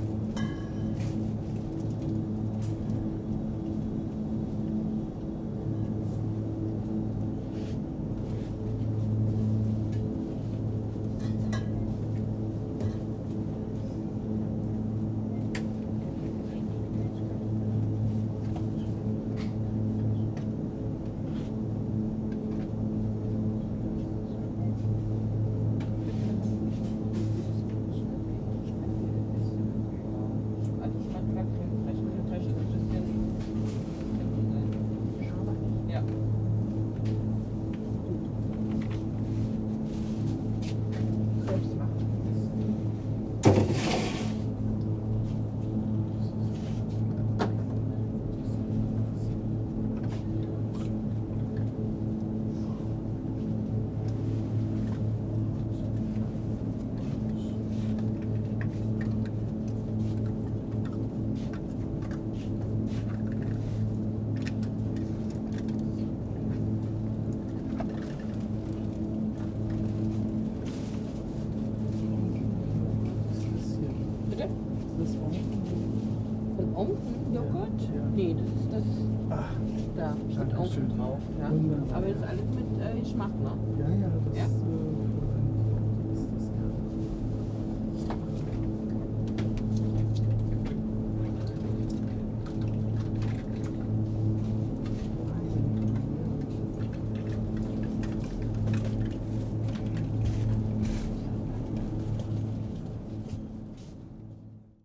Moabit, Berlin, Germany - Mournful supermarket fridge
An unusually musical fridge, especially around the yogurts.